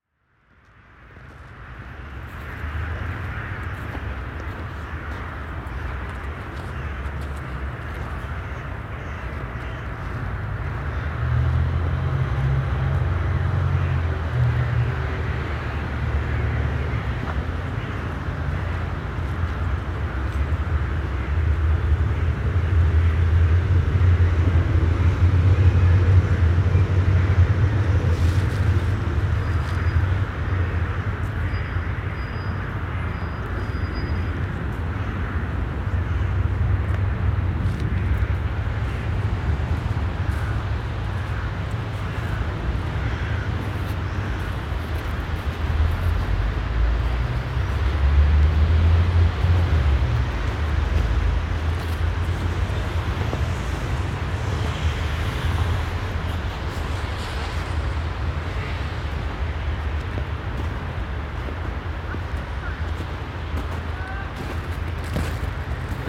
winter night in front of Marienkirche, Aporee workshop
radio aporee sound tracks workshop GPS positioning walk part 7, front of Marienkirche